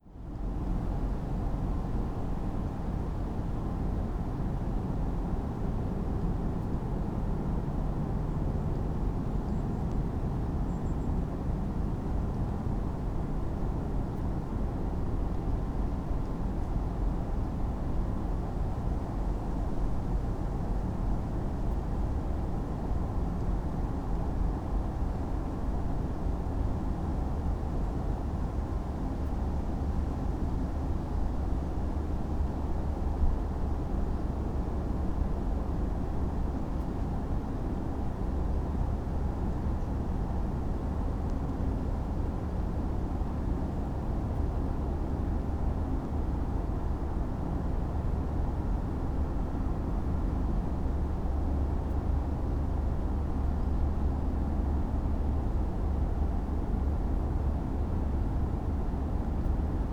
above Jezeří castle, Sunday afternoon, constant drone coming from the huge open cast mine (Sony PCM D50, Primo EM172)